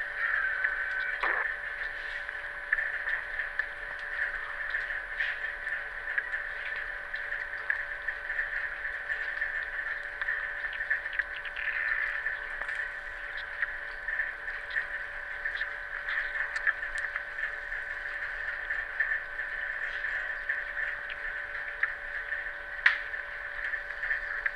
Gaarden-Ost, Kiel, Deutschland - Underwater Kiel Harbor, Germany
Kiel harbor, Germany, Underwater recording
Zoom H6 recorder, jrf D-series hydrophone
Some strange 10 kHz hiss but it's not the microphone because it only occurs on these harbor recordings.
29 October